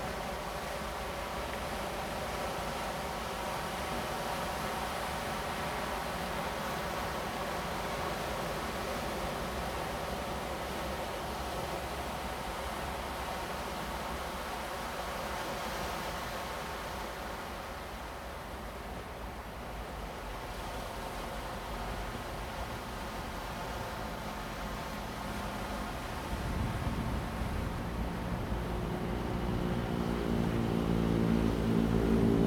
Sec., Huhai Rd., Zhongshan Dist., Keelung City - On the coast
sound of the waves, Rocky, On the coast, Traffic Sound, Thunder
Zoom H2n MS+XY +Sptial Audio
Keelung City, Taiwan, August 2016